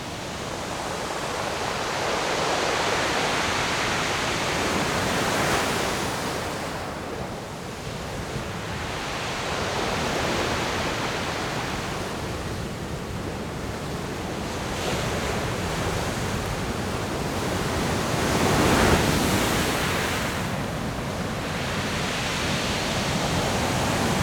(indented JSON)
{
  "title": "東清灣, Koto island - Sound of the waves",
  "date": "2014-10-29 13:59:00",
  "description": "Sound of the waves\nZoom H6 +Rode NT4",
  "latitude": "22.05",
  "longitude": "121.56",
  "altitude": "17",
  "timezone": "Asia/Taipei"
}